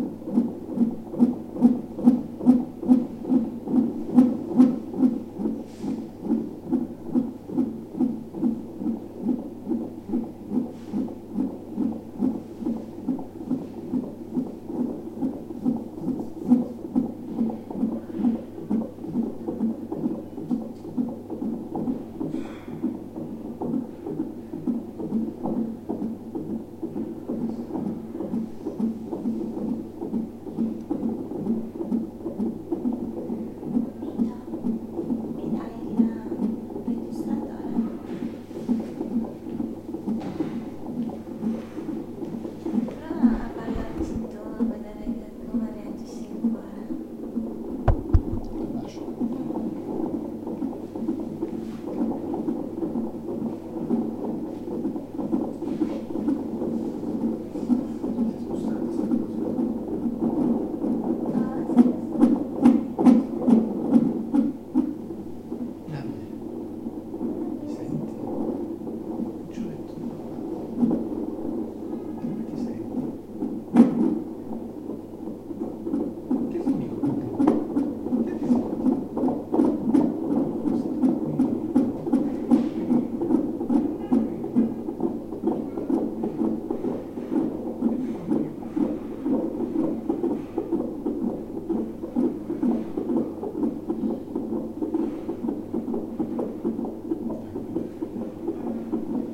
Iglesias CI, Italia - battiti di vita
reparto ostetricia ginecologia S. Barbara - tracciato - Tracce di vita
Iglesias Carbonia-Iglesias, Italy, 6 December 2011